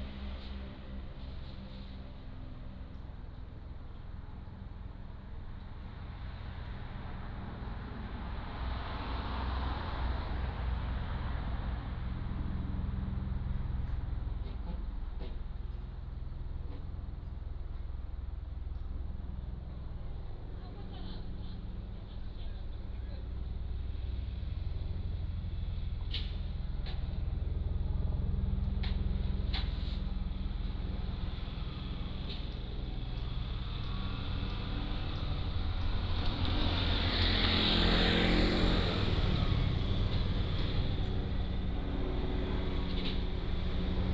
尖豐公路, Sanyi Township, Miaoli County - At the corner of the road
At the corner of the road, Traffic sound, Helicopter flying through